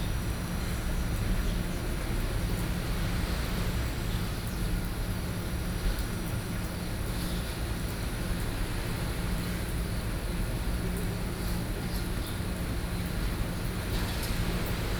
Wanli Dist., New Taipei City - Fishing port